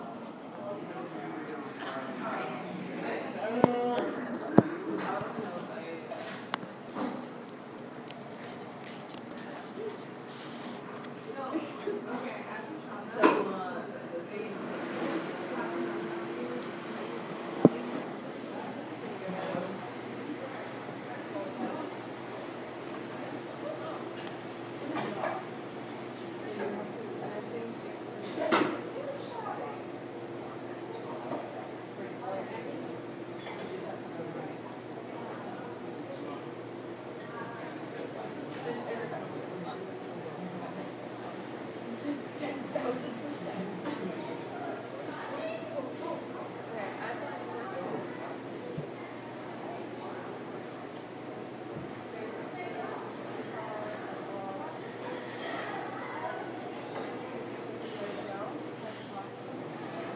Vassar College, Raymond Avenue, Poughkeepsie, NY, USA - Deece
Recorded inside the ACDC (Deece) at around 11 AM on Monday morning. Recorded with an iPhone